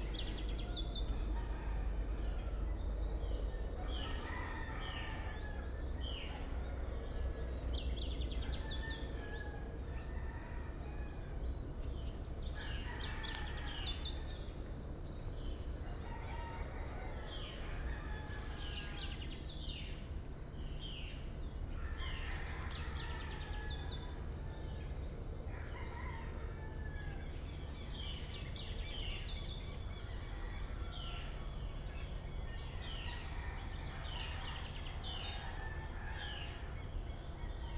Singing birds all over the place very early in the morning.
For a better audio resolution and other audios around this region take a look in here:
José Manuel Páez M.
Villavicencio, Meta, Colombia, 6 January 2016